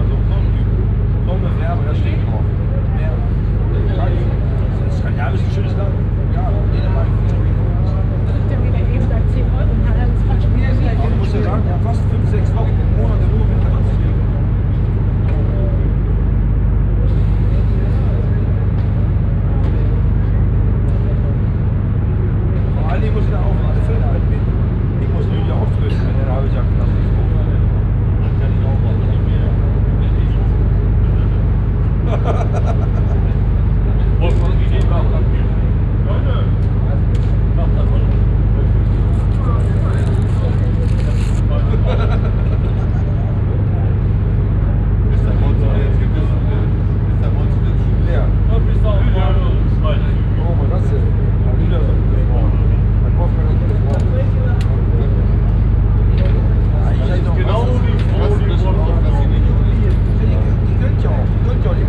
Dänemark - Ferry to Oslo, sun deck conversation
On the ferry from Kiel to Oslo. On the sundeck in the early evening. The constant deep drone sound of the ship motor and a conversation of a group of german men on a a table nearby.
international sound scapes - topographic field recordings and social ambiences
13 July 2012, 18:00